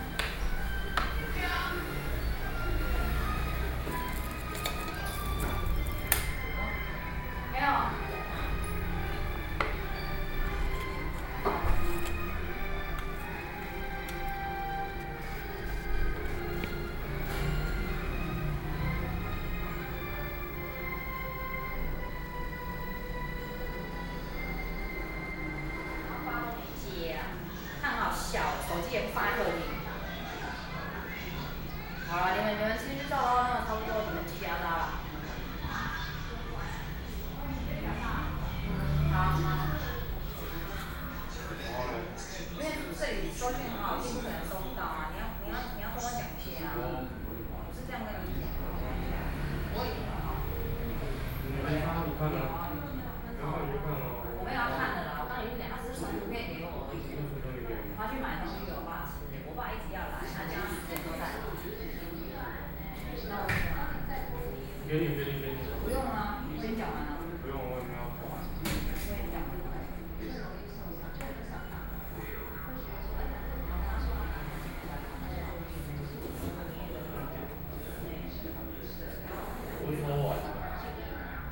In the restaurant, Freezer Noise, Television sound, Sony PCM D50 + Soundman OKM II